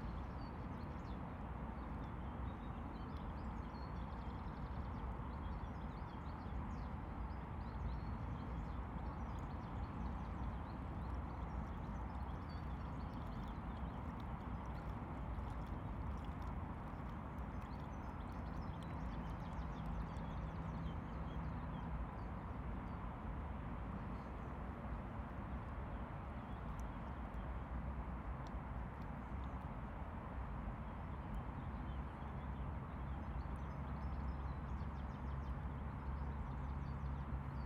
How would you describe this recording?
Although recorded only a few centimetres from the river surface there are almost no audible water sounds. All that can be heard is traffic from the highway on the opposite bank. Actually a canoeist is paddling past but is completely inaudible. The sound is quite clear when listening underwater (next recording).